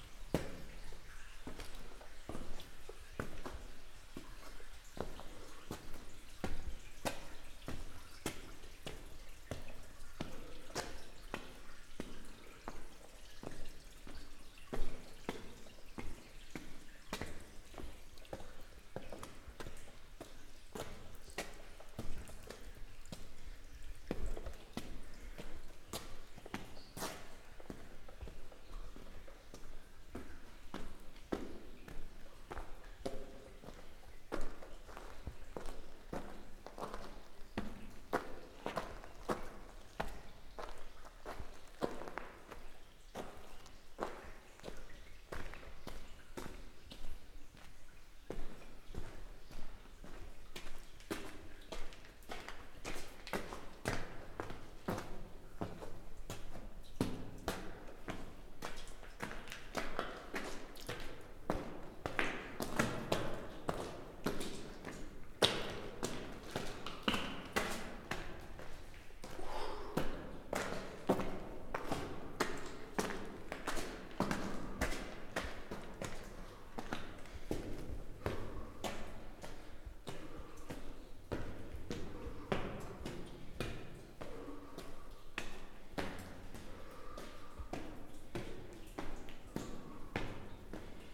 Alléves, France - Marche souterraine
Marche vers la sortie dans la grotte de Banges, changement permanent de l'acoustique suivant la forme des lieux.
France métropolitaine, France